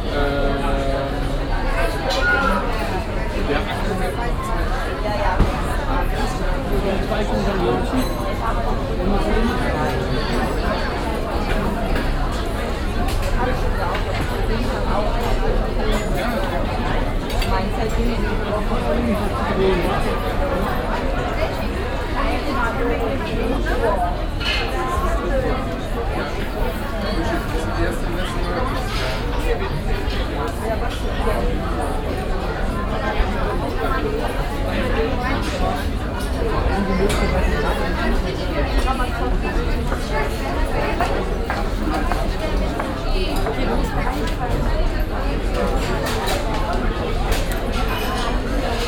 cologne, krebsgasse, eisladen unter vordach
lebhafter betrieb am eiscafe, nachmittags
spezielle akustische verdichtung da unter grossem vordach gelegen
soundmap nrw: social ambiences/ listen to the people - in & outdoor nearfield recordings
2 August 2008